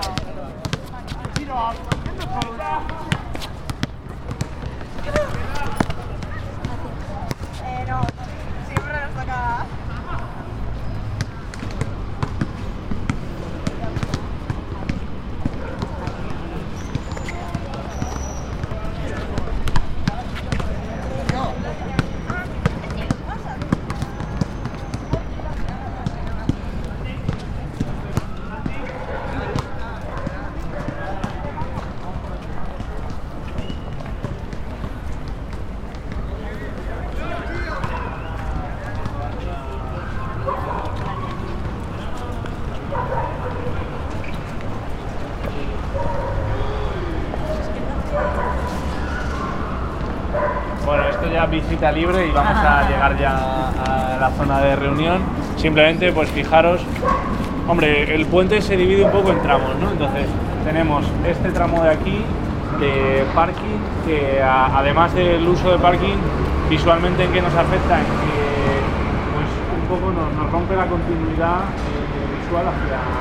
Adelfas, Madrid, Madrid, Spain - Pacífico Puente Abierto - Transecto - 12 - Llegada a Pacífico Puente Abierto. Final de Trayecto
Pacífico Puente Abierto - Transecto - Llegada a Pacífico Puente Abierto. Final de trayecto
7 April 2016, ~20:00